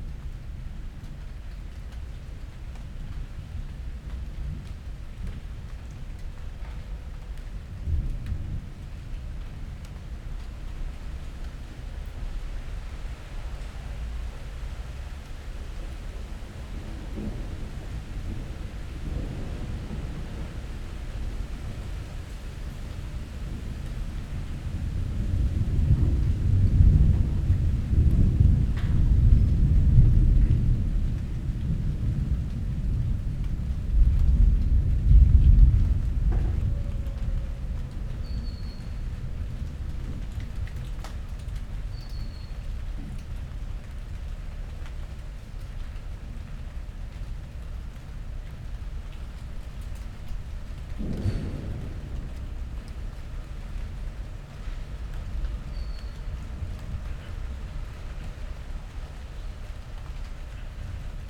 Berlin Bürknerstr., backyard window - thunderstorm approaching
26.05.2009, 14:00 thunderstorm approaching, wind rising, first raindrops falling.
Berlin, Germany, 26 May, 14:00